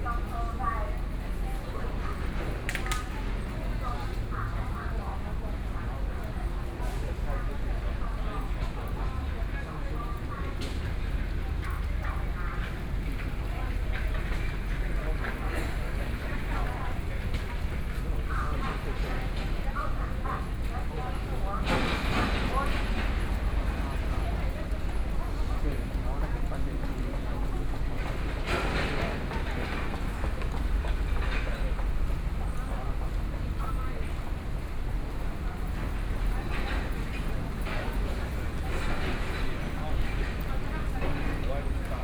Toward the station hall, From the station platform